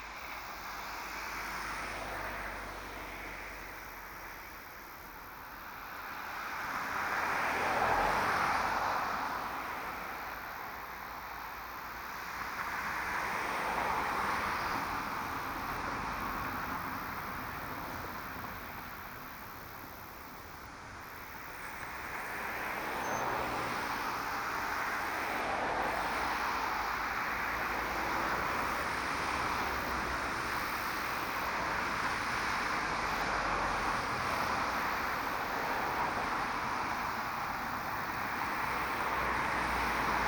Roesels bush cricket on verge
Hertfordshire, UK, 16 August 2010, 10:53